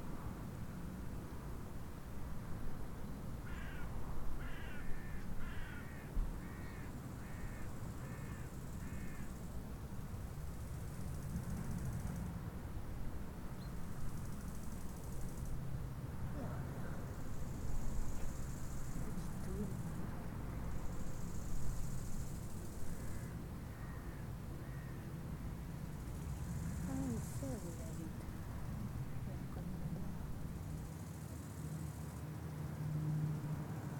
Chem. des Tigneux, Chindrieux, France - Dans l'herbe.
Période de sécheresse l'herbe rase est comme du foin il reste quelques criquets, les bruits de la vallée en arrière plan, le clocher de Chindrieux sonne 18h passage d'un avion de tourisme.